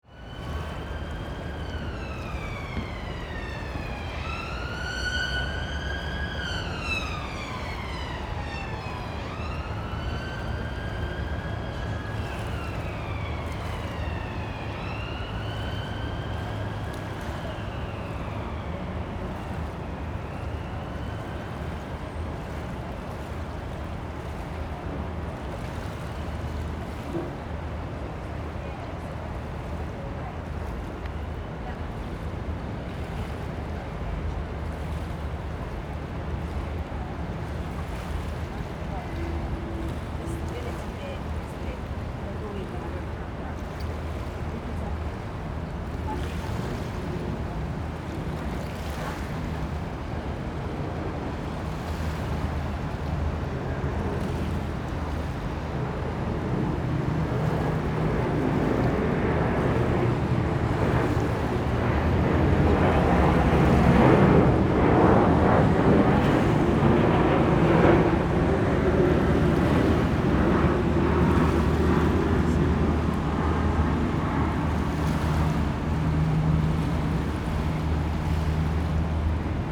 North Thames side atmosphere mid tide waves, Thames Exchange, Queen St Pl, London, UK - North Thames side atmosphere mid tide waves
This section of the Thames footpath passes under bridges and follows narrow lanes. The air conditioning in the adjacent buildings gives a unchanging airy drone - essentially a constant sonic fog - which envelopes all other sounds. When the tide is down waves on the beach are heard, as are more distant traffic, sirens or planes. Right behind me is one air conditioning outlet. Others are either side. The view is potentially interesting, but it is not a place to linger.